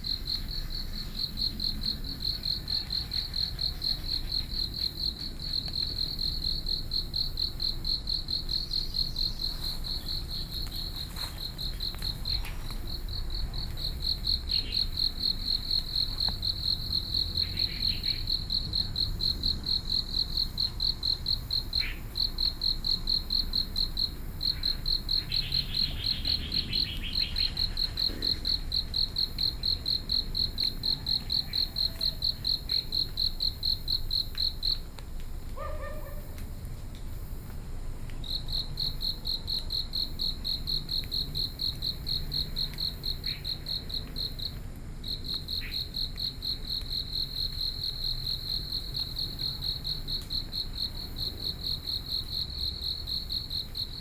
Early in the morning, At the lake, Insects sounds
Binaural recordings, Sony PCM D50